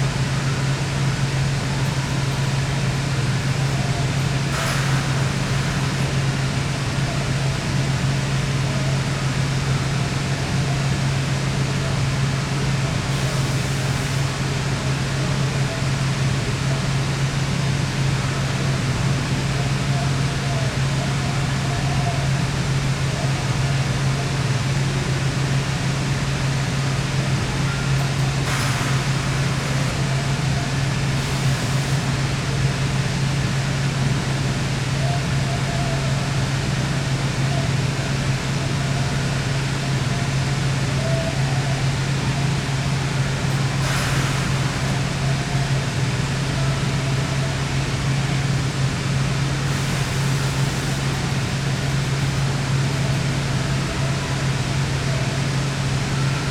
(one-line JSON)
{"date": "2011-04-20 11:14:00", "description": "Listening through a high window at the back of the mill. Radio in the background. Walking Holme", "latitude": "53.56", "longitude": "-1.80", "altitude": "167", "timezone": "Europe/London"}